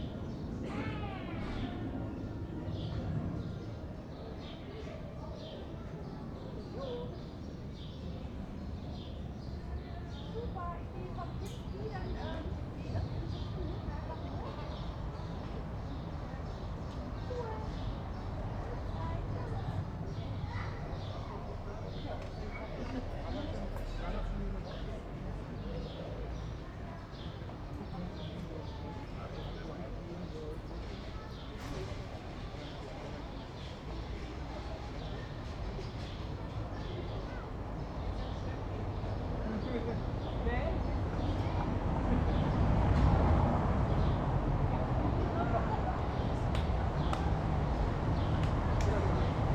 berlin: friedelstraße - the city, the country & me: street ambience
street ambience, late afternoon
the city, the country & me: june 3, 2010